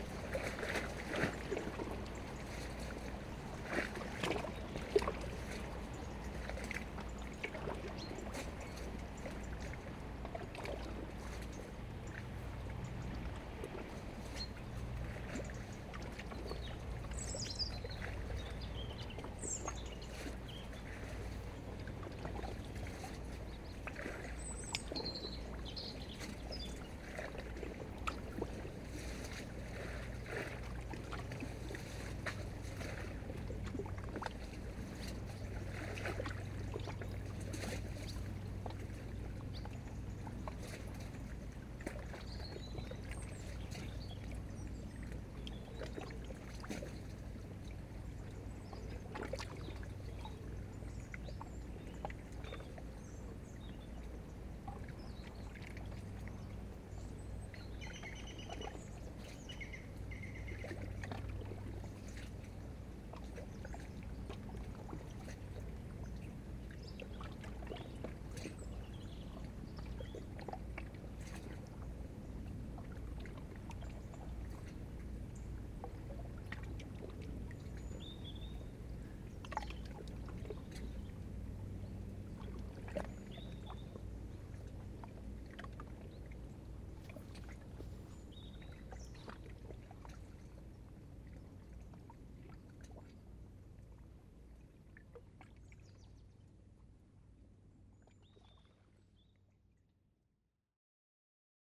Porte-Joie, France - Eurasian wren
An eurasian wren is singing and a boat is passing by on the Seine river.
22 September